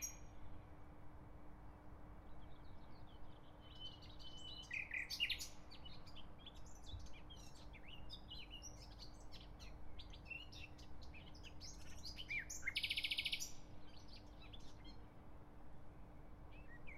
Czerwone Maki, Kraków, Poland - massive and microscopic sense-meaning: nightingale
Day 1 of the autoethnographic, collaborative writing project.